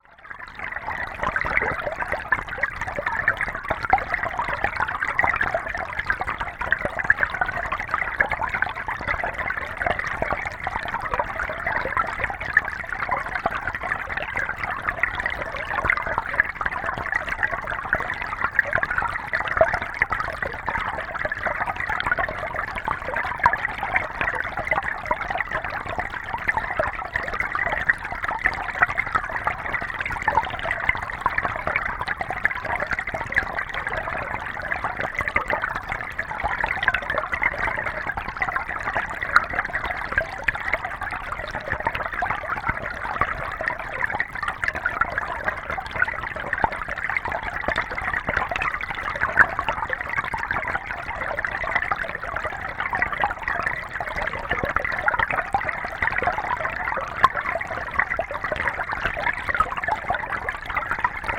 Keifer Creek, Ballwin, Missouri, USA - Keifer Creek Hydrophone
Hydrophone recording in a riffle of Keifer Creek.
Missouri, United States, 13 April 2021